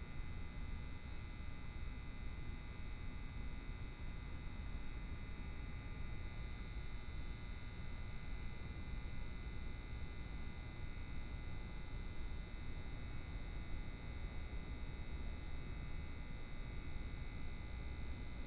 bicycle-parking area2
愛知 豊田 noize
18 July 2010